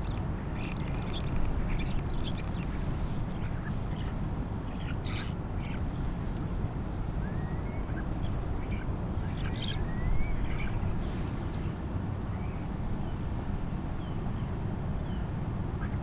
{"title": "Crewe St, Seahouses, UK - Starling flocking soundscape ...", "date": "2018-11-06 07:05:00", "description": "Starling flocking soundscape ... lavalier mics clipped to sandwich box ... starlings start arriving in numbers 13:30 + ... lots of mimicry ... clicks ... creaks ... squeaks ... bird calls from herring gull ... redshank ... oystercatcher ... lesser black-backed gull ... lots of background noise ... some wind blast ...", "latitude": "55.58", "longitude": "-1.65", "timezone": "Europe/London"}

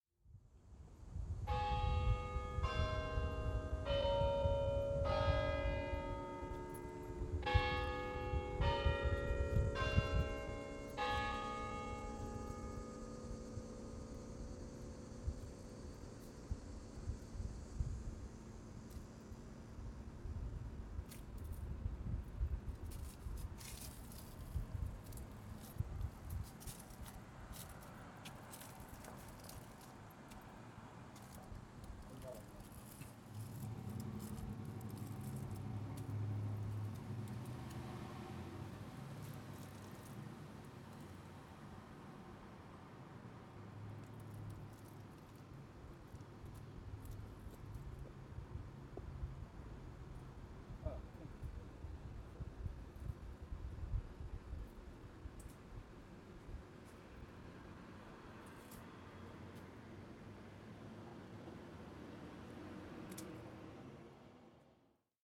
{"title": "Rutter St, Baltimore, MD, USA - Bells and foot traffic", "date": "2019-09-03 14:30:00", "description": "Zoom H4n recording next to a church and school. Bells ring, cars go by, and leafs crunch as people walk by.", "latitude": "39.31", "longitude": "-76.62", "altitude": "40", "timezone": "America/New_York"}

